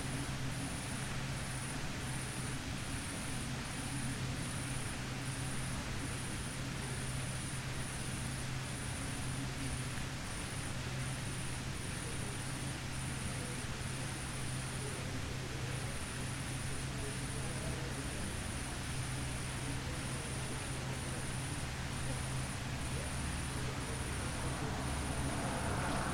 Ribniška ulica, Mestni park, Slovenia - corners for one minute
one minute for this corner: Ribniška ulica, Mestni park, pavilion